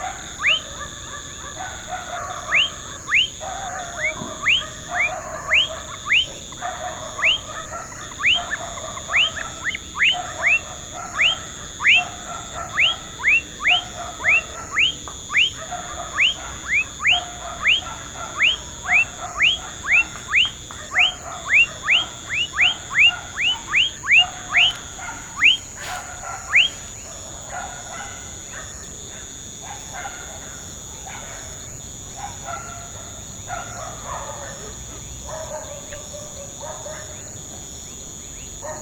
Bindastraat, Paramaribo, Suriname - dogs and frogs
dogs and frog in Paramaribo